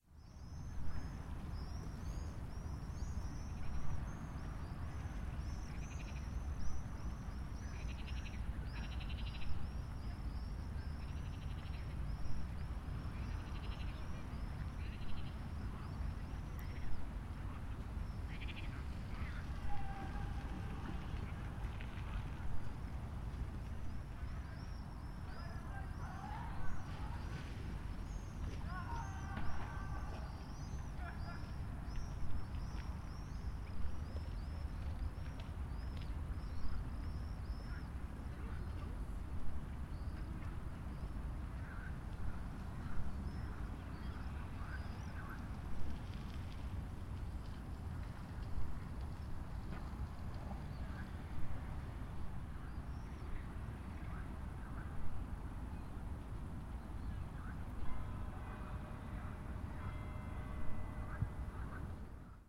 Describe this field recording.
Mitten in der Natur, doch vielfach kultiviert und polyphon genutzt: Das Summen von Insekten, die Schreie von Möwen, Frösche, die quaken, dazu Schritte und Räder über den Kiesweg, Rufe von einem nicht sichtbaren Sportpaltz, in die Idylle klingen Kirchenglocken. Die Glocken, die Rufe, sie verfangen sich in Echos, obwohl das Gelände eher flach scheint, und sie wirken nahe, obwohl ihre Distanz eigentlich gross ist: Nähe und Ferne verweben sich in einer eigentümlichen akustischen Topographie. Art and the City: Jorge Macchi (Limmat, 2012)